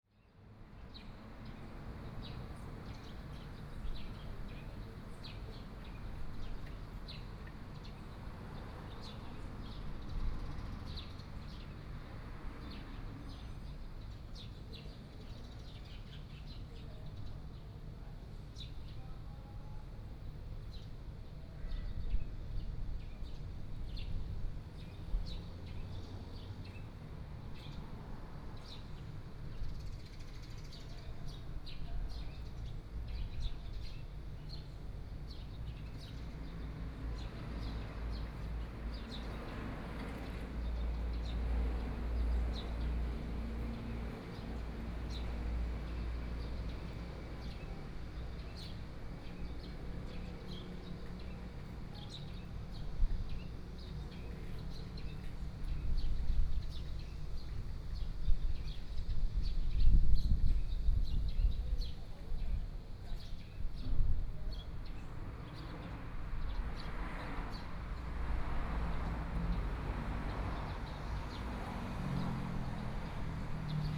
同安廟, Jhuangwei Township - In the temple
In front of the temple, Traffic Sound, Birdsong sound, Small village
Sony PCM D50+ Soundman OKM II